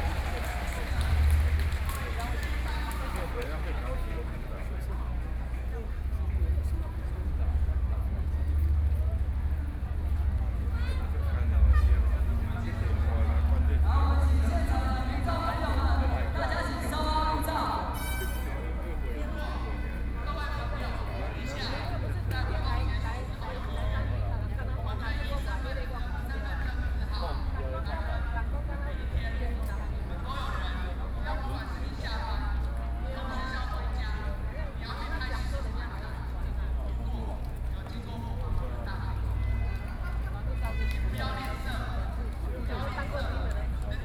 {"title": "Xinyi Road - Cries of protest", "date": "2013-08-03 21:01:00", "description": "Protest against the government, A noncommissioned officer's death, More than 200,000 people live events, Sony PCM D50 + Soundman OKM II", "latitude": "25.04", "longitude": "121.52", "altitude": "19", "timezone": "Asia/Taipei"}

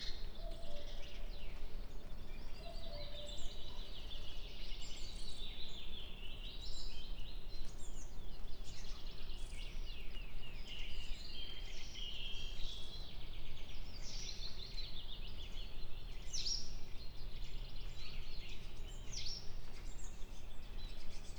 {"title": "ex Soviet military base, Vogelsang - ambience after light rain", "date": "2017-06-16 12:40:00", "description": "Garnison Vogelsang, ex Soviet base, on the balcony of former cinema/theater, raindrops, birds, insects\n(SD702, MKH8020)", "latitude": "53.05", "longitude": "13.37", "altitude": "57", "timezone": "Europe/Berlin"}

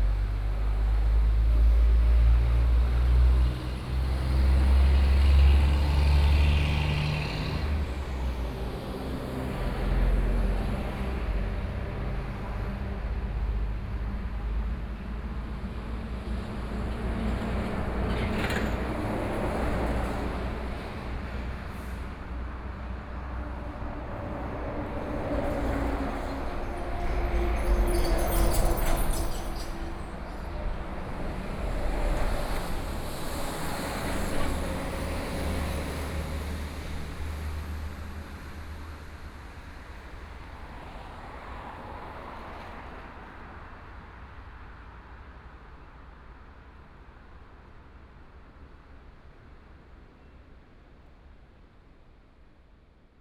{"title": "Wai'ao Station, Taiwan - outside the station", "date": "2013-11-08 12:43:00", "description": "Standing on a small square outside the station, In front of the traffic noise, The distant sound of the waves, Binaural recordings, Zoom H4n+ Soundman OKM II", "latitude": "24.88", "longitude": "121.85", "altitude": "9", "timezone": "Asia/Taipei"}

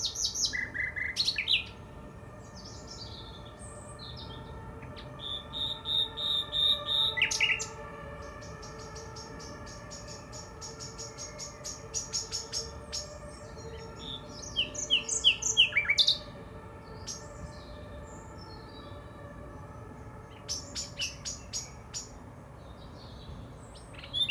{"title": "Prenzlauer Berg, Berlin, Germany - Early spring Nightingale and the new S-Bahn", "date": "2014-04-22 20:38:00", "description": "The Nightingale season has started again in Berlin. This one is singing beside the rail tracks. It is hardly mentioned but a significant (to me) change is happening to the city's soundscape. The S-Bahn is introducing new rolling stock and the musical glissandos of the old S-Bahn trains - one of my favourite Berlin sounds - are rapidly disappearing. The new sounds (heard here) are far less appealing. Really a pity.", "latitude": "52.54", "longitude": "13.43", "altitude": "50", "timezone": "Europe/Berlin"}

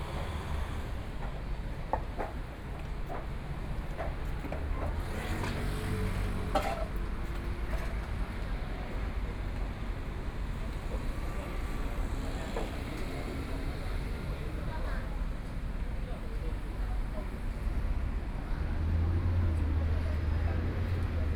{"title": "Beitou, Taipei City - niu-rou-mian", "date": "2014-01-19 17:06:00", "description": "in the niu-rou-mian shop, Binaural recordings, Zoom H4n + Soundman OKM II", "latitude": "25.14", "longitude": "121.50", "timezone": "Asia/Taipei"}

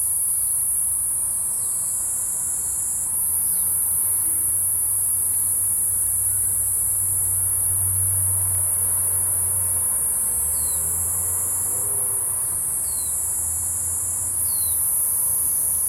{"title": "Lane TaoMi, Puli Township - A small village in the evening", "date": "2015-08-11 17:14:00", "description": "Goose calls, Traffic Sound, Insect sounds, A small village in the evening\nZoom H2n MS+XY", "latitude": "23.94", "longitude": "120.93", "altitude": "471", "timezone": "Asia/Taipei"}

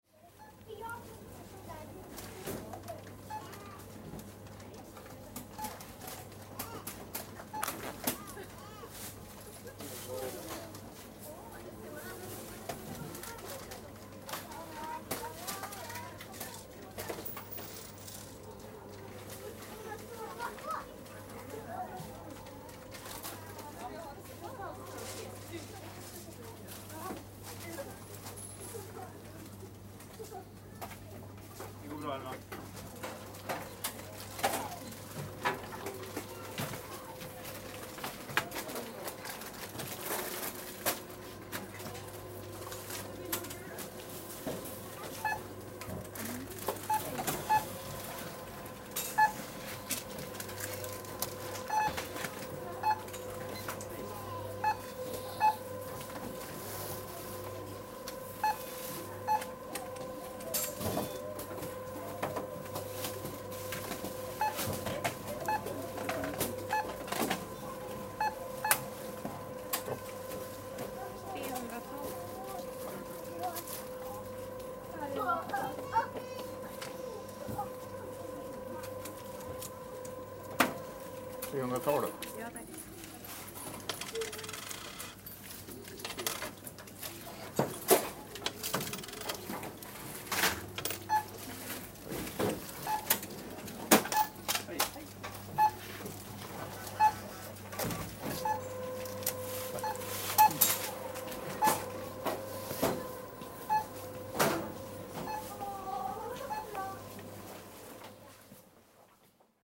{"title": "sturefors, supermarket - cash desk, supermarket", "description": "stafsäter recordings.\nrecorded july, 2008.", "latitude": "58.34", "longitude": "15.72", "altitude": "78", "timezone": "GMT+1"}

